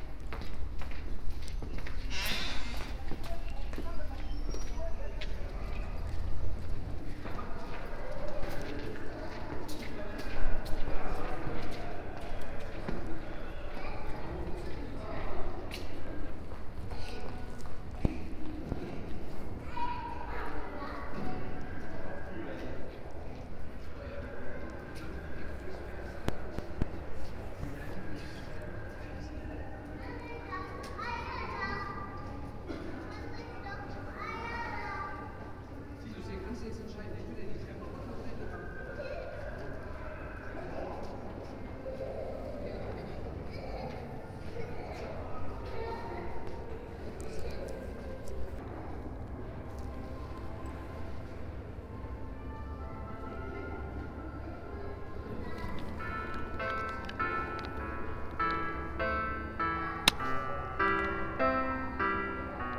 HKW, Tiergarten, Berlin, Germany - bittschoen mistergaddafi
a pass by FORMER WEST, a conference and exhibition in the HKW
Deutschland, European Union, March 2013